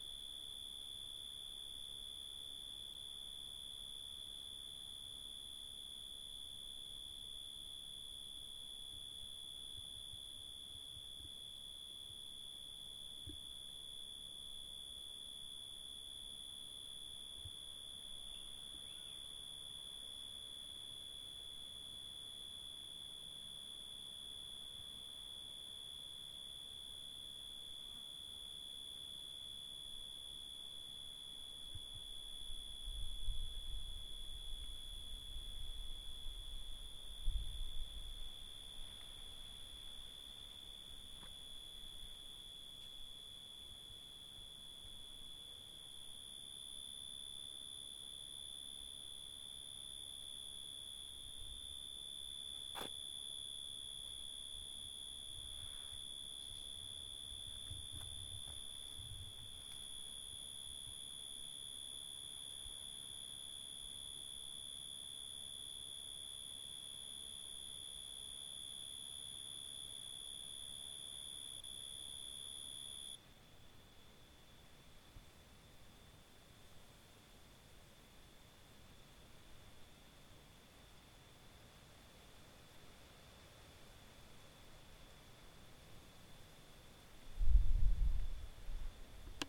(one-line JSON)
{"title": "Hato Corozal, Casanare, Colombia - Grillos", "date": "2013-06-02 09:06:00", "description": "GRillos en una REserva NAtural", "latitude": "6.03", "longitude": "-71.94", "altitude": "576", "timezone": "America/Bogota"}